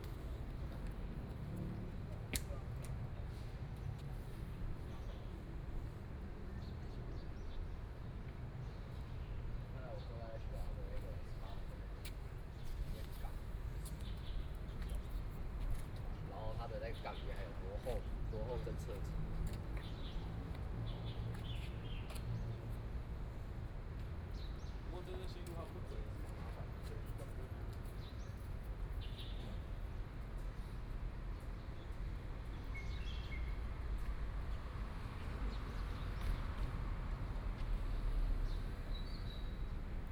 Yuan Ze University, Taoyuan County - Sit in the smoking area
Traffic Sound, Birds singing, Students voice chat, Binaural recording, Zoom H6+ Soundman OKM II